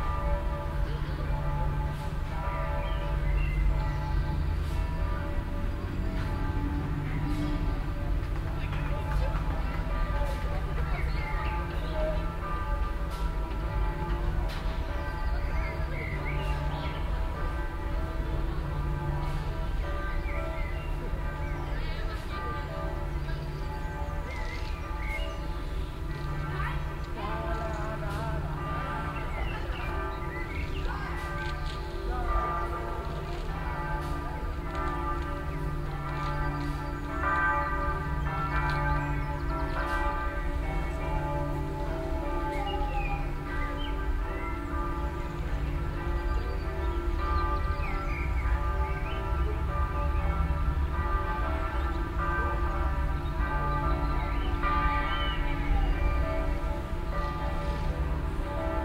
osnabrück, schlosspark, schüler und glocken
project: social ambiences/ listen to the people - in & outdoor nearfield recordings